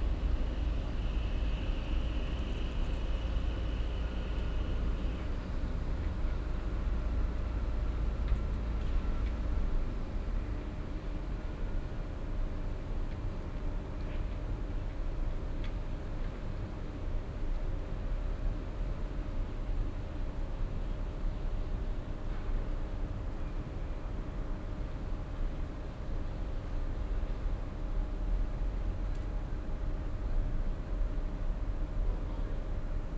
dortmund, silberstrasse, kolpinghaus, garagenzufahrt
kleine nebengasse am sonntag nachmittag im herbstwind, blättern fliegen und rascheln, wenige passanten, die lüftung der tiefgarage
soundmap nrw
social ambiences/ listen to the people - in & outdoor nearfield recordings